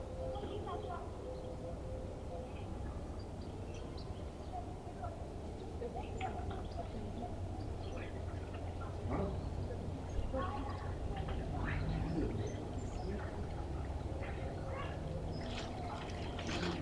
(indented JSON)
{
  "title": "ул. Трудовая, дом, г, Костянтинівка, Донецька область, Украина - Голоса улицы провинциального города",
  "date": "2018-10-23 14:46:00",
  "description": "Различные интершумы\nЗвук: Zoom H2n",
  "latitude": "48.54",
  "longitude": "37.69",
  "altitude": "105",
  "timezone": "Europe/Kiev"
}